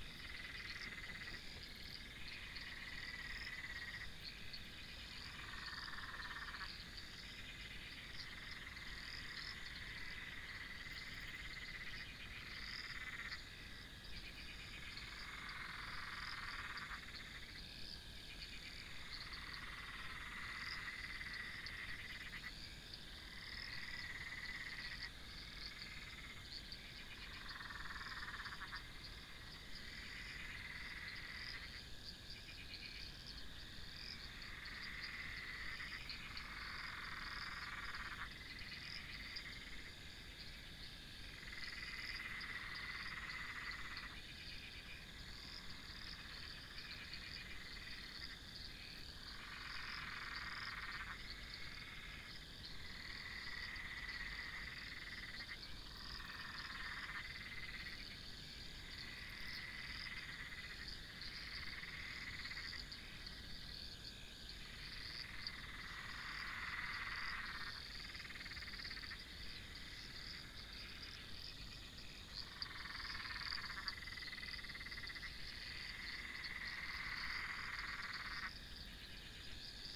Coomba Park NSW, Australia - Imagine Mid Night
Frogs and cicadas orchestra at mid night. Recorded with Zoom H1 stereo recorder.